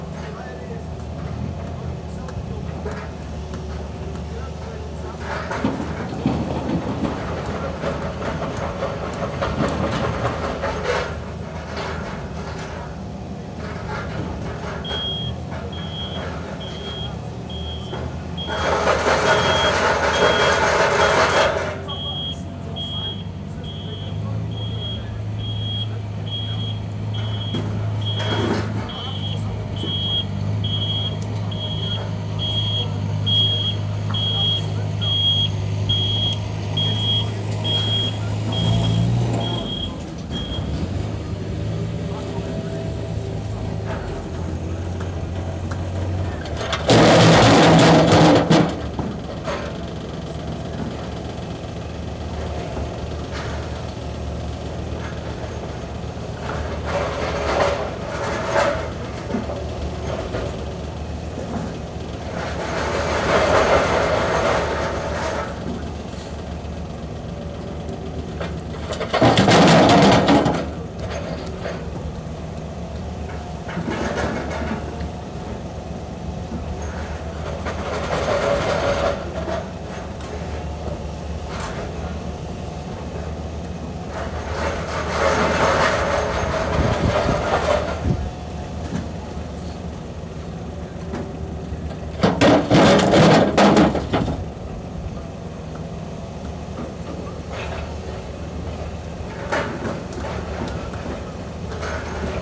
Jens Vejmand laying cobblestones. 7400 Herning, Denmark - Jens Vejmand
Recorded in the UK as our back street is getting new cobblestones. But reminded me of a Danish folk song about a paviour called Jens Vejmand who is buried here.